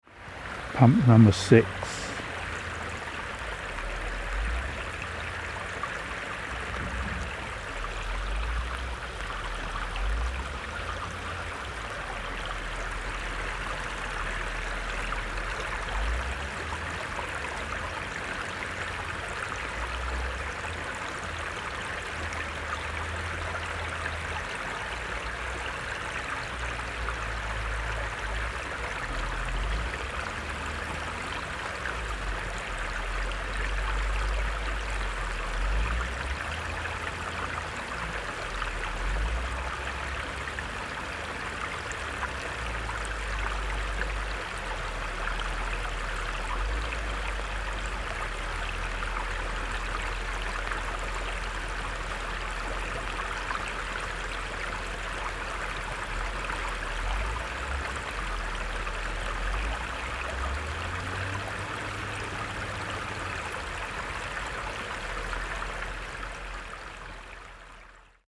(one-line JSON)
{"title": "Weißwasser, Germany - Water pump 6", "date": "2016-10-18 17:51:00", "description": "Water needs to be constantly pumped from the ground around the mine area to prevent flooding. Along this road there is a pump every 100 meters or so.", "latitude": "51.49", "longitude": "14.62", "altitude": "169", "timezone": "Europe/Berlin"}